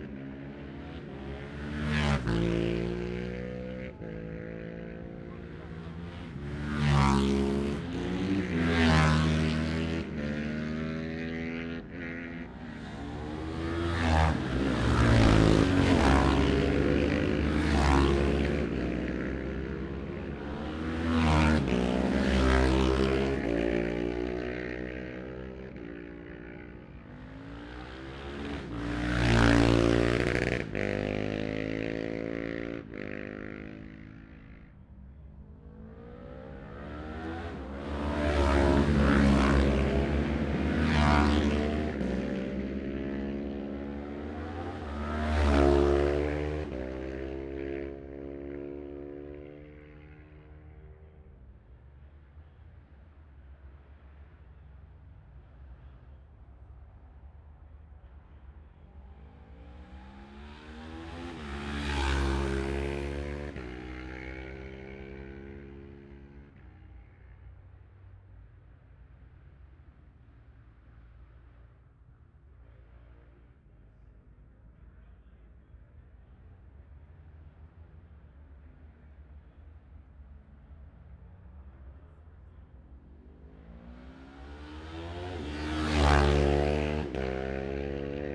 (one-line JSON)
{"title": "Jacksons Ln, Scarborough, UK - olivers mount road racing 2021 ...", "date": "2021-05-22 12:25:00", "description": "bob smith spring cup ... twins group B qualifying ... luhd pm-01 mics to zoom h5 ...", "latitude": "54.27", "longitude": "-0.41", "altitude": "144", "timezone": "Europe/London"}